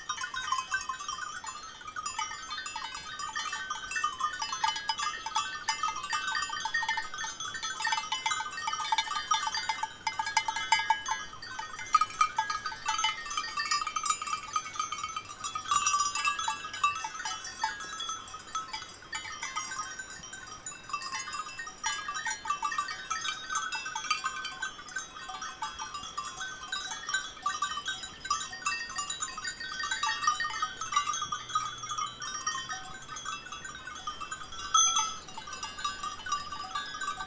Flumet, France - Goats and bells
Powerful white noise of the Arrondine river, walking with the friendly goats and their bells.